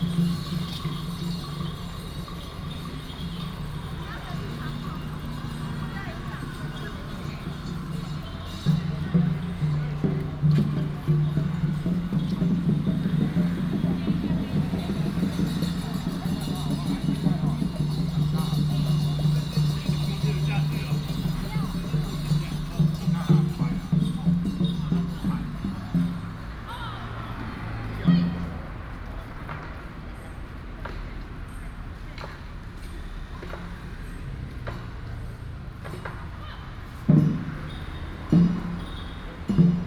{"title": "埔里藝文中心, Puli Township, Nantou County - In the square", "date": "2016-09-18 16:12:00", "description": "In the square, Theater performance, Traffic sound", "latitude": "23.97", "longitude": "120.97", "altitude": "461", "timezone": "Asia/Taipei"}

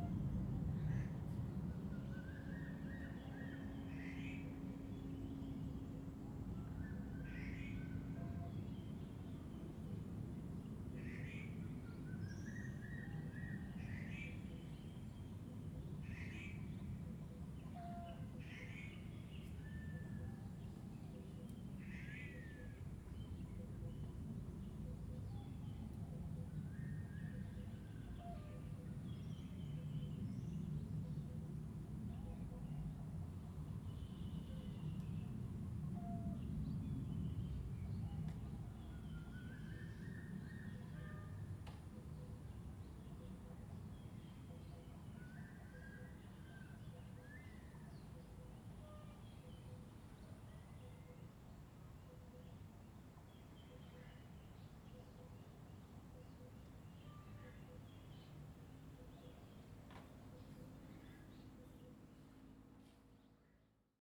{"title": "埔里鎮桃米里, Nantou County - Bird calls", "date": "2015-04-29 10:59:00", "description": "Bird calls, Aircraft flying through\nZoom H2n MS+XY", "latitude": "23.94", "longitude": "120.92", "altitude": "503", "timezone": "Asia/Taipei"}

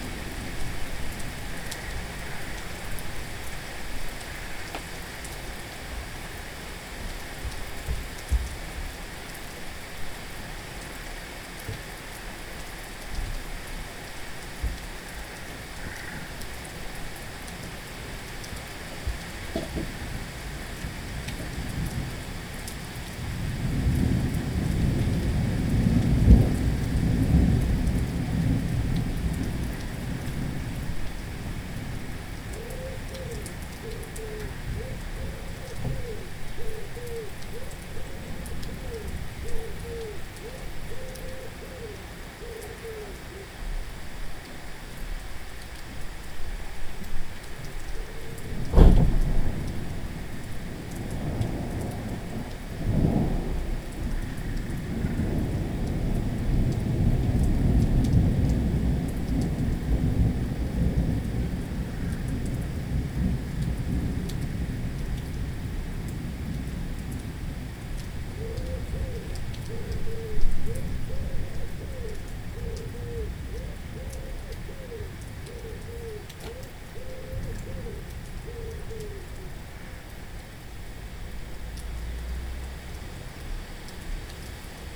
{"title": "Colchester, Essex, UK - Thunderstorm: 7.am 18th July 2014", "date": "2014-07-18 07:15:00", "description": "Zoom H4n, Storm + Rain, early.", "latitude": "51.87", "longitude": "0.88", "altitude": "37", "timezone": "Europe/London"}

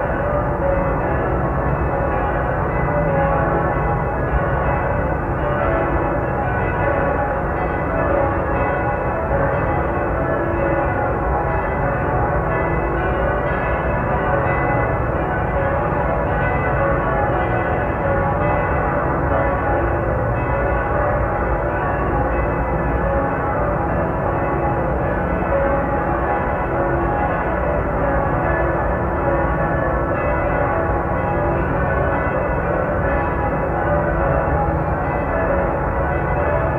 {"title": "Würzburg, Deutschland - Bombenangriff Glockenläuten zum 16.3.1945", "date": "2013-03-16 21:20:00", "description": "26 min binaural recording Glockenläuten der Stadt.", "latitude": "49.81", "longitude": "9.95", "altitude": "264", "timezone": "Europe/Berlin"}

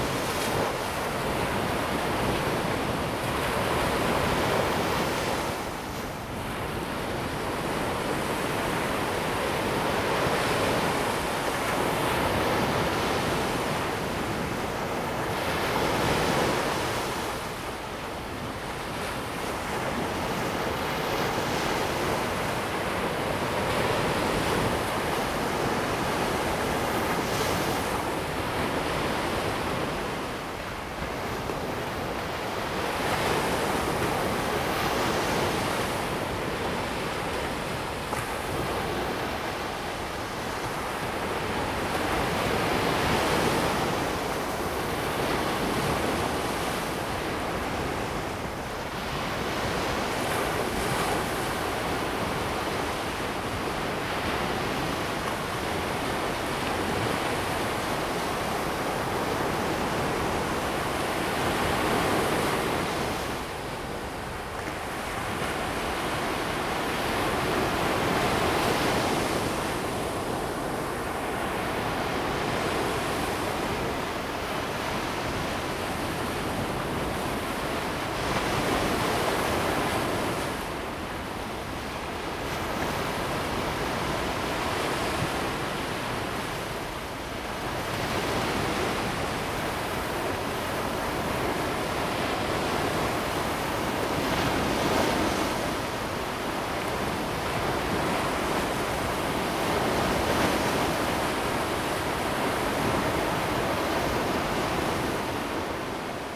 {"title": "Tamsui District, New Taipei City - On the beach", "date": "2017-01-05 15:29:00", "description": "On the beach, Sound of the waves\nZoom H2n MS+XY", "latitude": "25.19", "longitude": "121.41", "timezone": "GMT+1"}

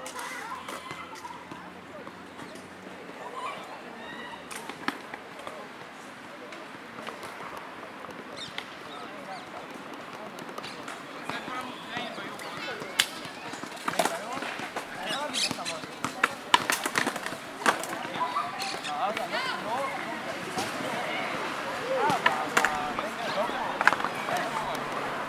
{"title": "Sasha Argov St, Raanana, Israel - Skatepark - Raanana", "date": "2019-03-23 16:00:00", "description": "Skatepark at Raanana.", "latitude": "32.19", "longitude": "34.86", "altitude": "60", "timezone": "Asia/Jerusalem"}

